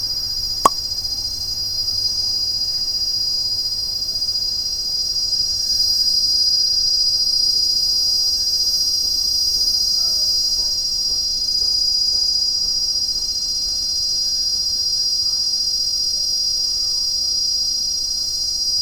{"title": "Entoto, Addis-Abeba, Oromia, Éthiopie - forestfish", "date": "2011-11-13 15:43:00", "description": "Eucalyptus trees on the hills of Entoto(ge'ez : እንጦጦ)\nreaching for the forestfish", "latitude": "9.09", "longitude": "38.76", "altitude": "2883", "timezone": "Africa/Addis_Ababa"}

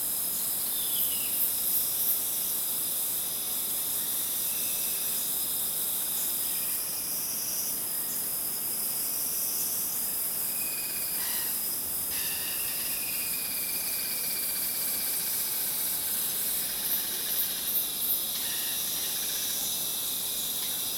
{"title": "Trilha Natureza - ceasing the afternoon", "date": "2017-01-28 18:44:00", "description": "recorded at Iracambi, a NGO dedicated to protect and grow forest", "latitude": "-20.93", "longitude": "-42.54", "altitude": "814", "timezone": "America/Sao_Paulo"}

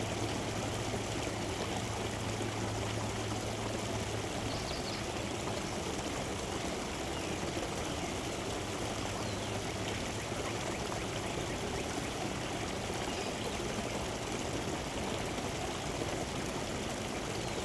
{"title": "Compton Spring/Town Branch Tributary, Bentonville, Arkansas, USA - All-American", "date": "2022-04-22 07:54:00", "description": "Recording of Compton Spring/Town Branch Tributary from All-American Trail.", "latitude": "36.38", "longitude": "-94.21", "altitude": "392", "timezone": "America/Chicago"}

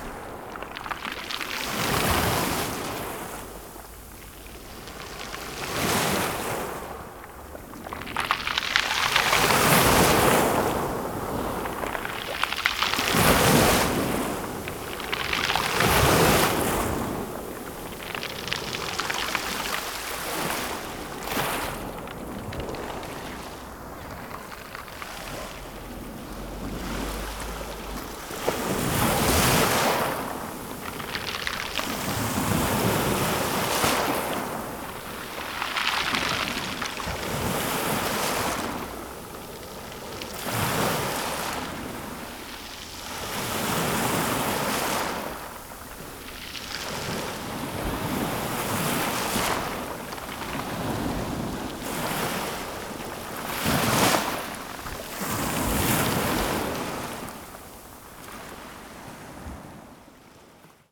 {"title": "Beach in Sukhumi, Abkhazia (Georgia) - Waves breaking onto the beach in Sukhumi", "date": "2014-05-12 10:30:00", "description": "Recored with a Tascam DR-05 held half a meter above the breaking waves.", "latitude": "43.00", "longitude": "41.01", "altitude": "2", "timezone": "Asia/Tbilisi"}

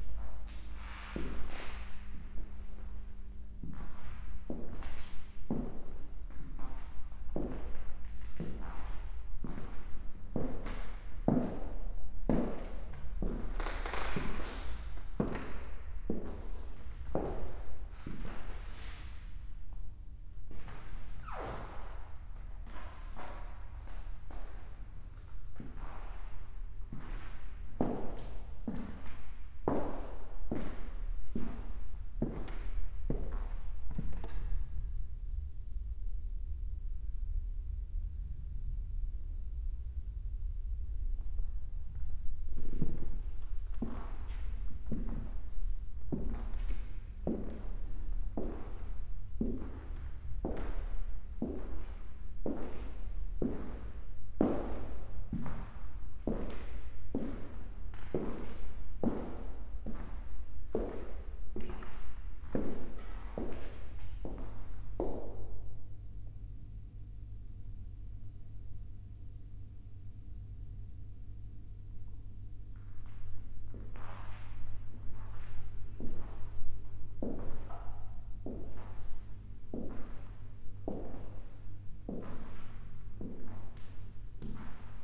{"title": "tivoli, MGLC, ljubljana - walk through exhibition halls, parquet", "date": "2014-01-10 13:59:00", "description": "quiet halls, old Tivoli castle", "latitude": "46.05", "longitude": "14.49", "altitude": "331", "timezone": "Europe/Ljubljana"}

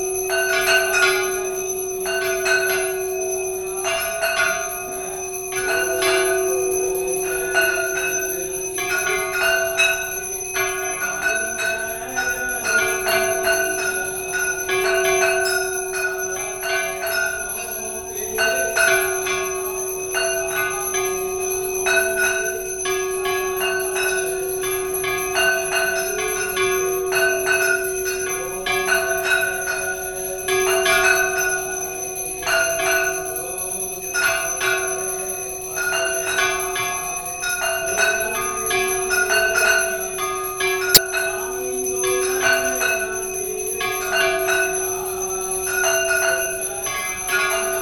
{"title": "Sewak Nagar, Gwalior, Madhya Pradesh, Inde - Hanuman temple", "date": "2015-10-24 19:35:00", "description": "A ceremony dedicated to Hanuman.", "latitude": "26.23", "longitude": "78.17", "altitude": "274", "timezone": "Asia/Kolkata"}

